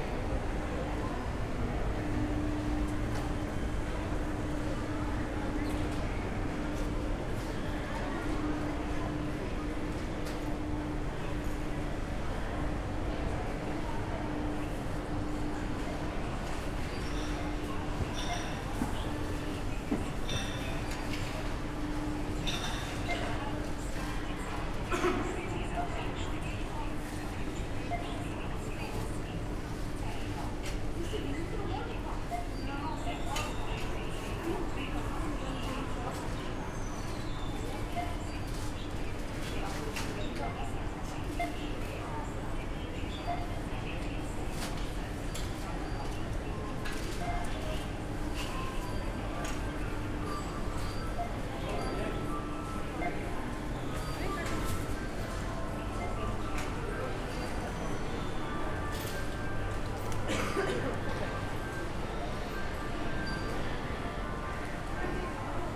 Maribor, Europark - shopping center walk
a short walk through the usual shopping center madness. the narratives of these places is almost the same everwhere, and so are the sounds.
(SD702 DPA4060)